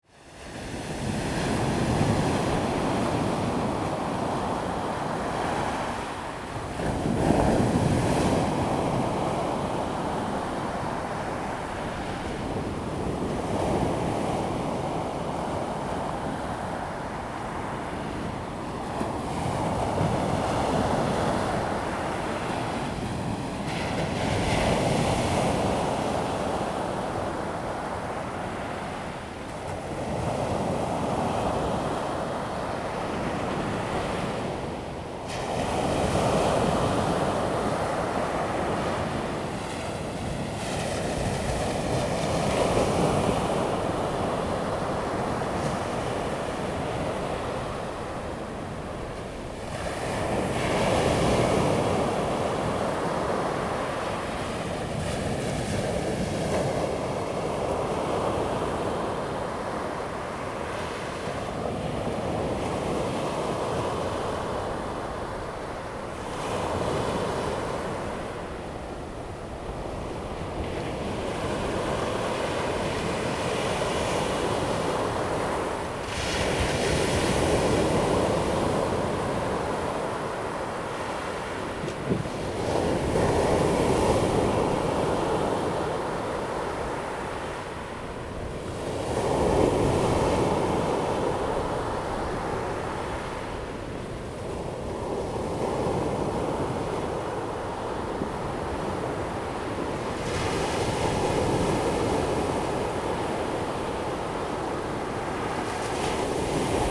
Qianzhouzi, Tamsui Dist., 新北市 - Sound of the waves
On the beach, Sound of the waves
Zoom H2n MS+XY
New Taipei City, Tamsui District, 4 January